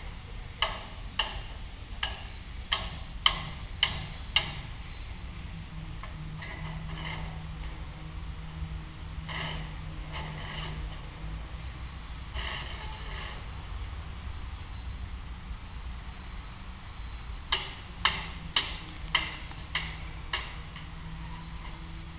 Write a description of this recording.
some building/construction works and distant sound of fountains